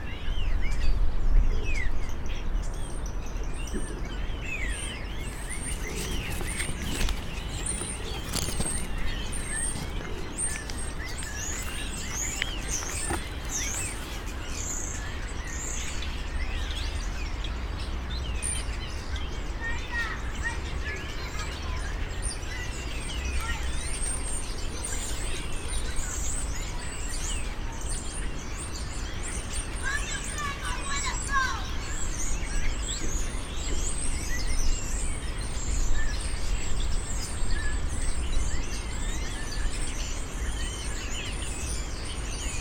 Thamesmead, UK - Birds of Southmere Park Way
Recorded with a stereo pair of DPA 4060s and a Marantz PMD661.